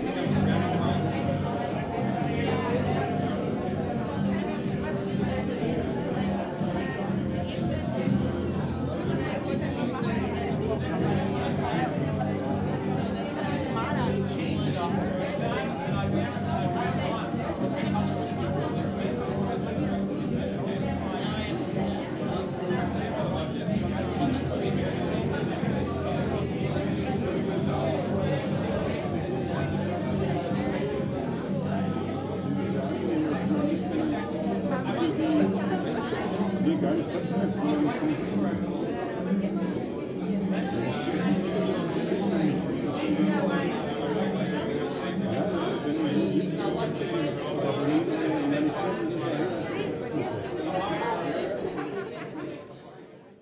berlin - neuodessa
neuodessa, bar, talk